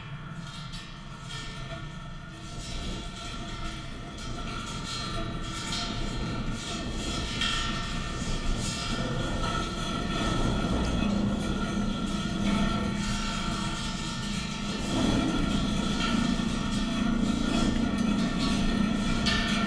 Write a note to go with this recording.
contact mics on fence wire catching wind and grass sounds